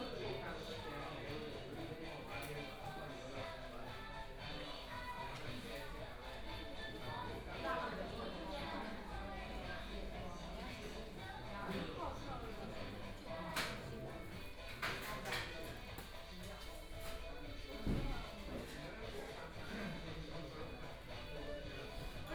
中華人民共和國上海黃浦區 - In the restaurant
from Laoximen Station to South Xizang Road Station, Binaural recordings, Zoom H6+ Soundman OKM II
Huangpu, Shanghai, China, 1 December 2013